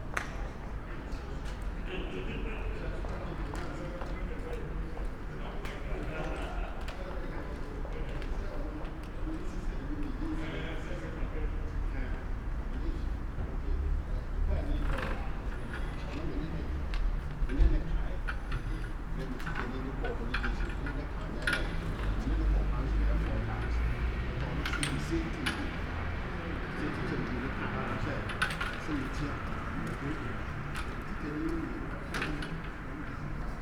berlin: friedelstraße - the city, the country & me: night-time ambience
night owls, waitress of a café securing the tables and chairs outside with cables, cars passing by
the city, the country & me: february 27, 2014